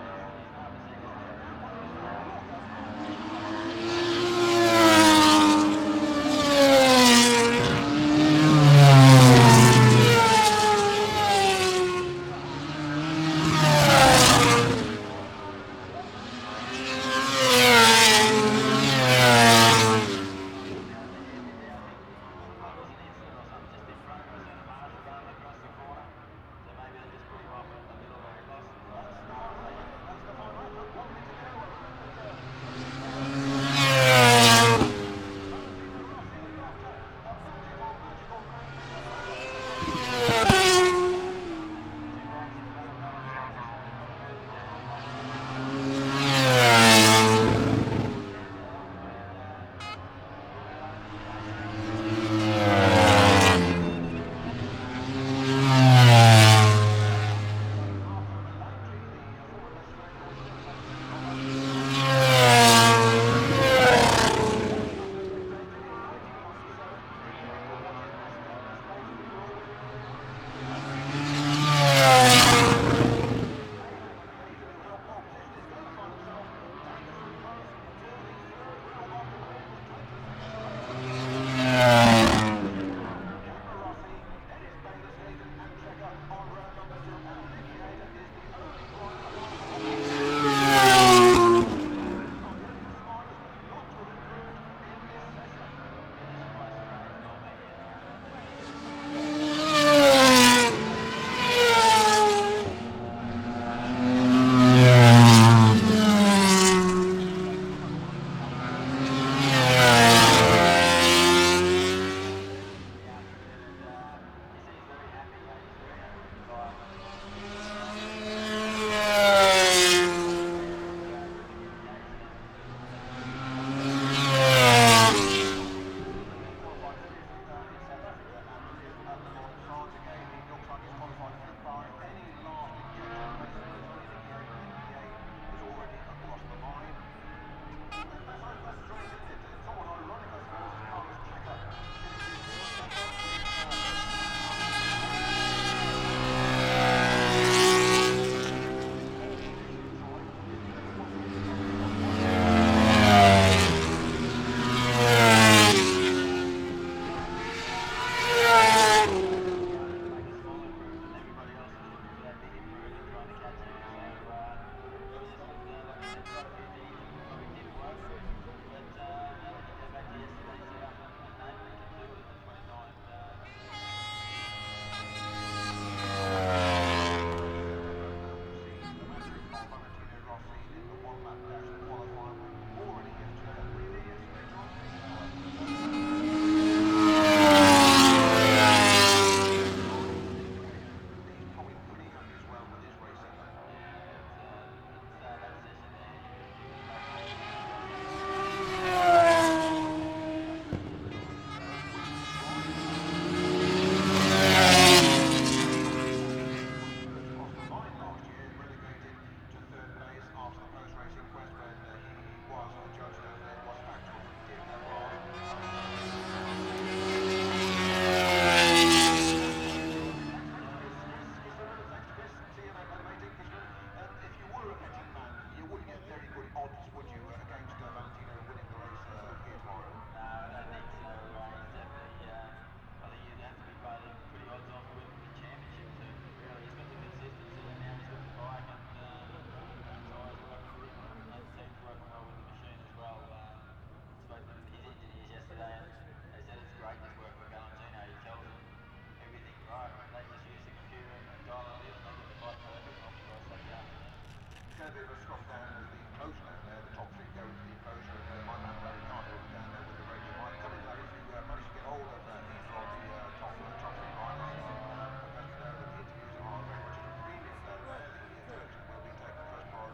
British Motorcycle Grand Prix 2004 ... qualifying part two ... one point stereo mic to minidisk ...